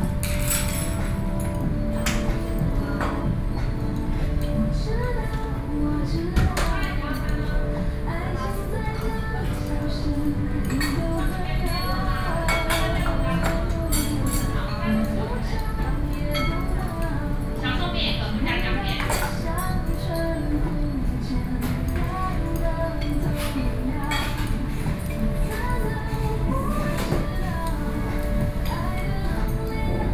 Taipei city, Taiwan - In the restaurant
Shilin District, Taipei City, Taiwan, November 2012